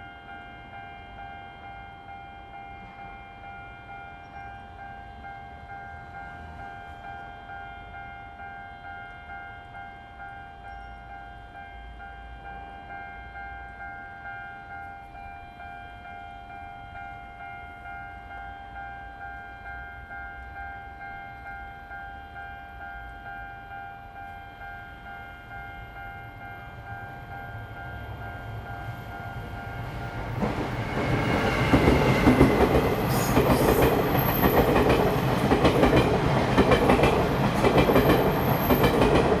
Xinxing Rd., 新竹縣新豐鄉 - the railway level road
In the railway level road, Traffic sound, Train traveling through
Zoom H2n MS+XY
Hsinchu County, Taiwan, 7 February 2017